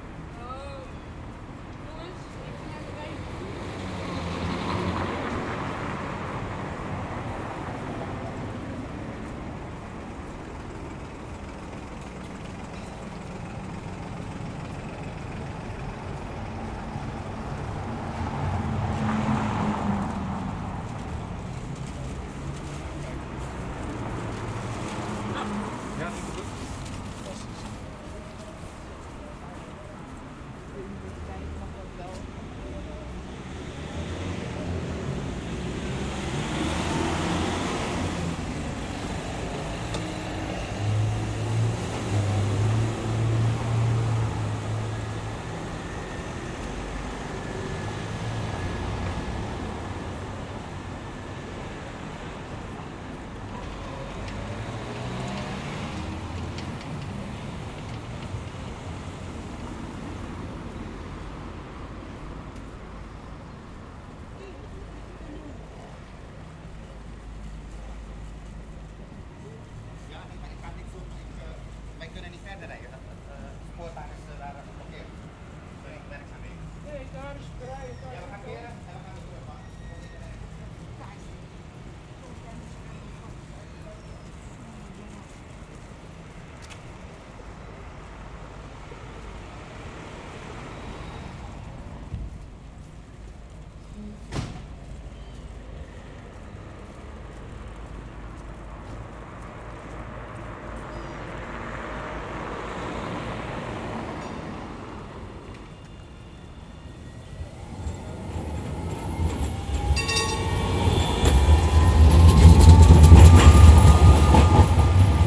Heemraadsplein, Rotterdam, Netherlands - Walk over Nieuwe Binnenweg
Walk over Nieuwe Binnenweg from s Gravendijkwal to Heemraadsplein. It is possible to listen to some of the regular activities taking place in this important street of the city.
Zuid-Holland, Nederland, 18 January 2022